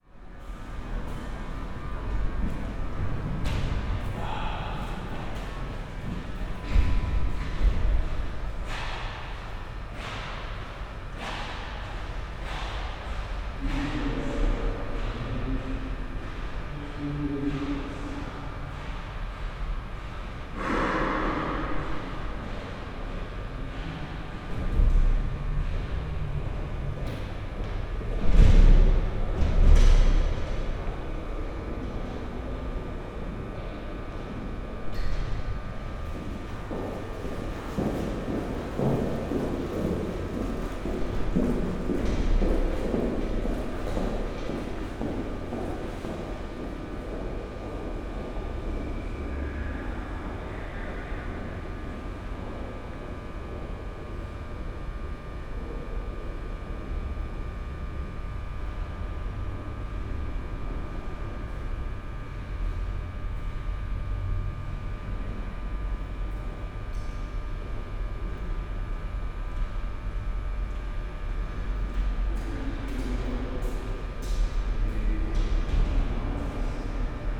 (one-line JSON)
{"title": "berlin, mariendorfer damm: ullsteinhaus - the city, the country & me: entrance hall of the ullstein building", "date": "2013-09-04 11:29:00", "description": "entrance hall, broken lamp, visitors entering or leaving the building\nthe city, the country & me: september 4, 2013", "latitude": "52.45", "longitude": "13.38", "altitude": "46", "timezone": "Europe/Berlin"}